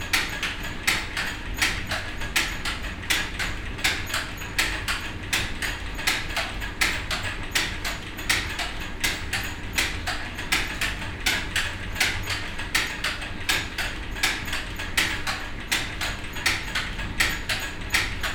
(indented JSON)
{
  "title": "enscherange, rackesmillen, tooth belt drive",
  "date": "2011-09-23 19:09:00",
  "description": "Inside the historical mill, in a room at the ground floor directly behind the mills running water wheel. The sound of the tooth bell drive running with a constructed imbalance here.\nEnscherange, Rackesmillen, Zahnradantrieb\nIn der historischen Mühle in einem Raum im Erdgeschß direkt hinter dem laufenden Mühlenwasserrad. Der Klang eines konstruktionsweise unbalancierten Zahnriemenantriebs.\nÀ l’intérieur du moulin historique, dans une salle au rez-de-chaussée, directement derrière la roue à aubes du moulin Le son de la courroie qui tourne avec un déséquilibre voulu pour faire fonctionner le mécanisme au premier étage. À l’étage sous le toit du vieux moulin. Le bruit extérieur du moulin à farine.\nÀ l’étage sous le toit du vieux moulin. Le bruit du mécanisme intérieur du moulin à farine.",
  "latitude": "50.00",
  "longitude": "5.99",
  "altitude": "312",
  "timezone": "Europe/Luxembourg"
}